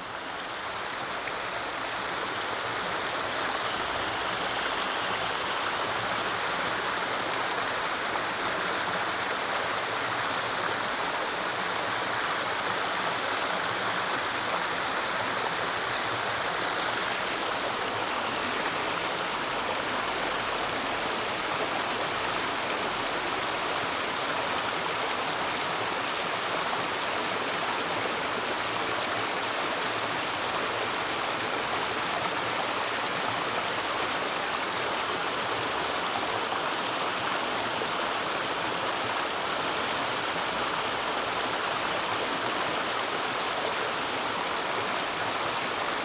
Pradinal, Sauveterre-la-Lémance, France - La Lémance River
Tech Note : SP-TFB-2 binaural microphones → Sony PCM-M10, listen with headphones.
24 August 2022, 14:25